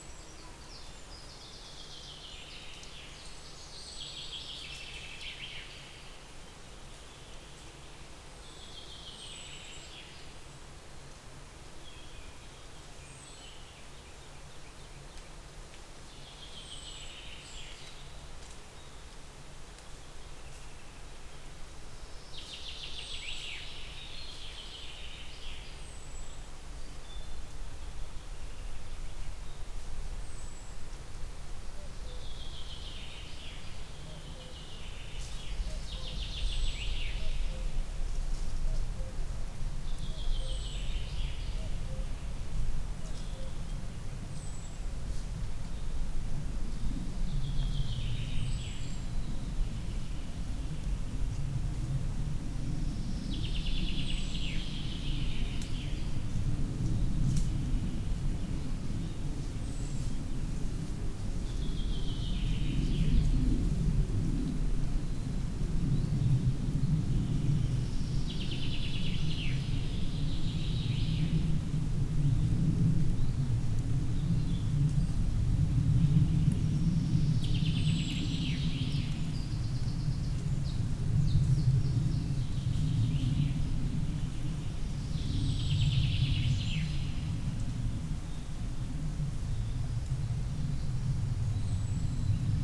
Birds, mouses walking.
MixPre2 with Lom Uši Pro, AB 50cm.